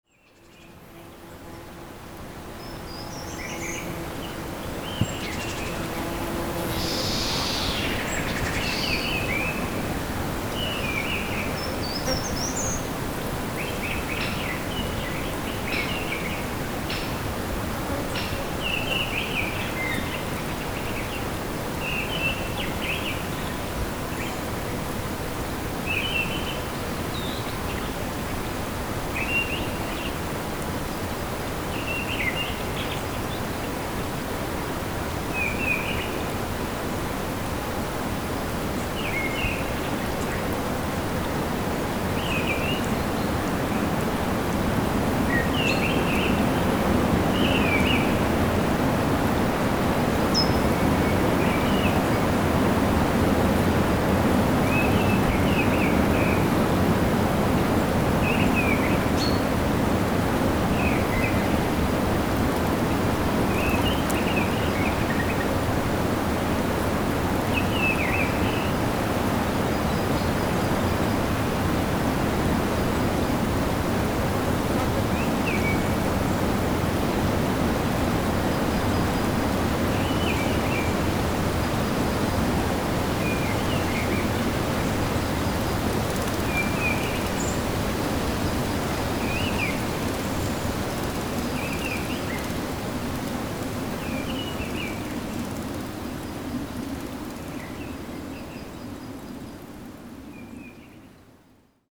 Las Tourasses, Gabre, France - Near Gîte Barané
Birds, wind, water, bee, Zoom H6